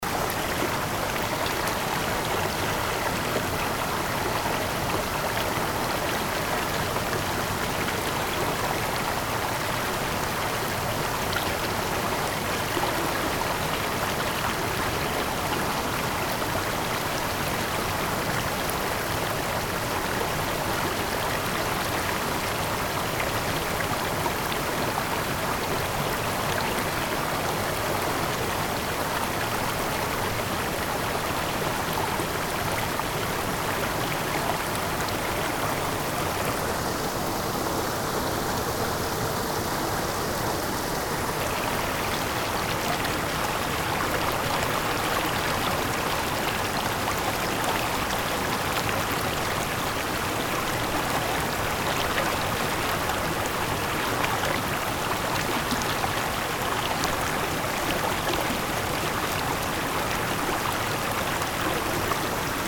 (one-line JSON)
{"title": "nasino, pennavaire, water sounds", "date": "2009-07-27 13:39:00", "description": "different water sounds of the river pennavaire\nsoundmap international: social ambiences/ listen to the people in & outdoor topographic field recordings", "latitude": "44.11", "longitude": "8.03", "altitude": "487", "timezone": "Europe/Berlin"}